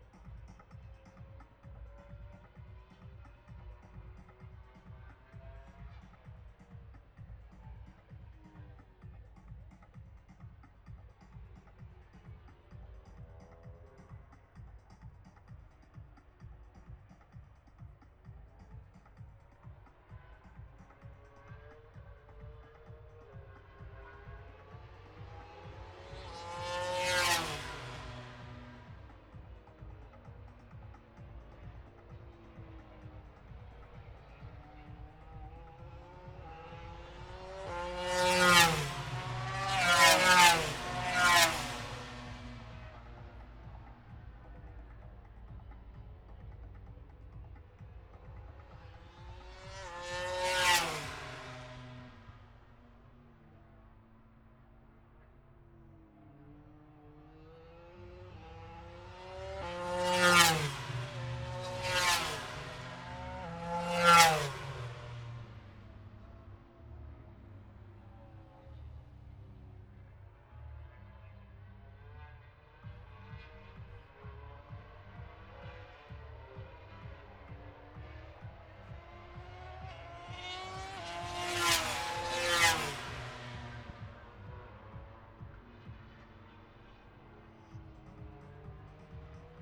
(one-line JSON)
{"title": "Towcester, UK - british motorcycle grand prix 2022 ... moto grand prix ...", "date": "2022-08-06 09:49:00", "description": "british motorcycle grand prix 2022 ... moto grand prix free practice three ... dpa 4060s on t bar on tripod to zoom f6 ... plus the disco ...", "latitude": "52.08", "longitude": "-1.02", "altitude": "158", "timezone": "Europe/London"}